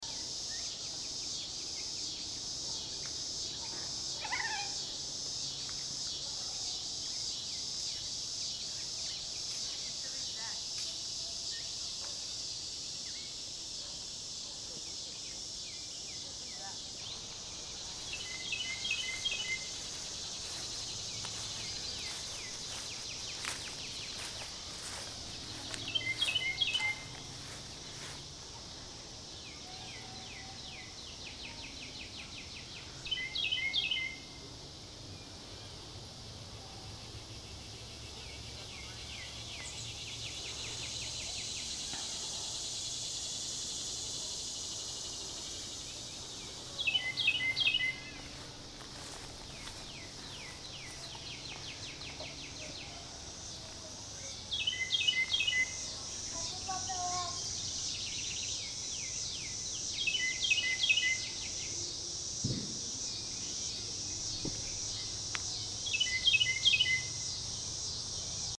Disc Golf Course, Mississinewa Lake State Recreation Area, Peru, IN, USA - Birdsong and cicadas at Mississinewa Lake
Sounds heard at the disc golf course, Mississinewa Lake State Recreation Area, Peru, IN 46970, USA. Part of an Indiana Arts in the Parks Soundscape workshop sponsored by the Indiana Arts Commission and the Indiana Department of Natural Resources. #WLD 2020
Indiana, United States of America, 18 July 2020